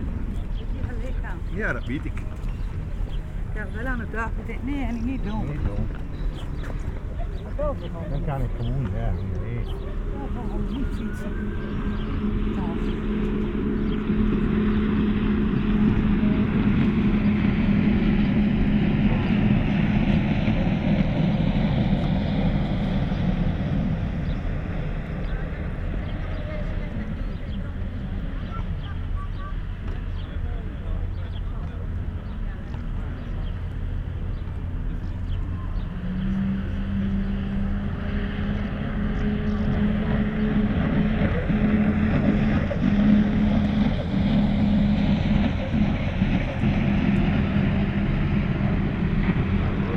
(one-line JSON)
{"title": "urk: staverse kade - the city, the country & me: beach opposite industrial harbour", "date": "2013-06-11 19:39:00", "description": "evening ambience\nthe city, the country & me: june 11, 2013", "latitude": "52.66", "longitude": "5.60", "altitude": "1", "timezone": "Europe/Amsterdam"}